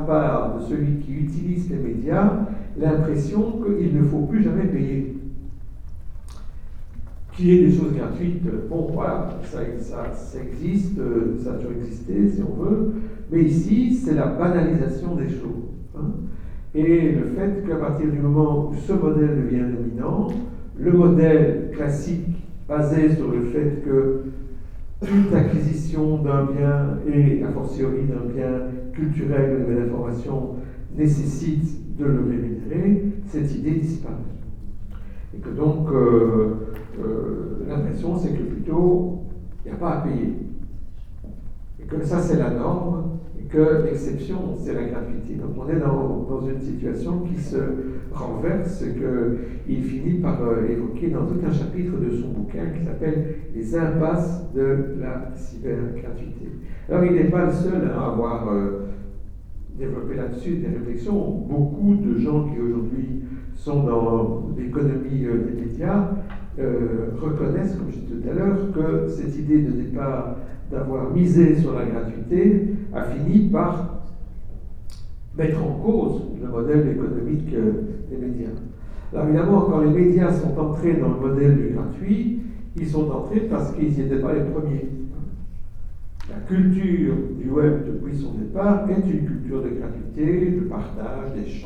Centre, Ottignies-Louvain-la-Neuve, Belgique - A course of mass media
In the big Agora auditoire, a course about mass media.
Ottignies-Louvain-la-Neuve, Belgium, 11 March 2016, ~11:00